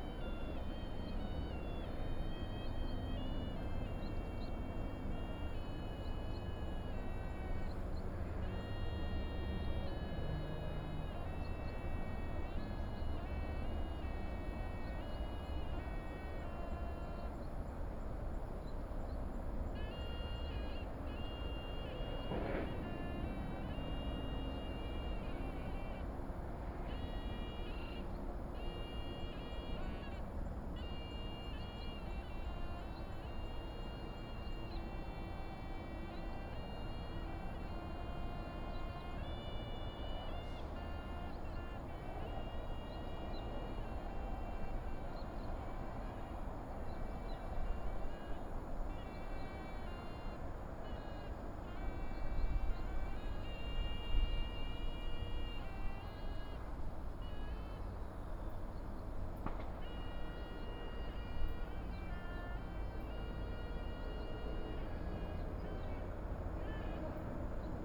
金獅步道, Hukou Township, Hsinchu County - Near high-speed railroads
Near high-speed railroads, traffic sound, birds, Suona
Hsinchu County, Taiwan, 12 August 2017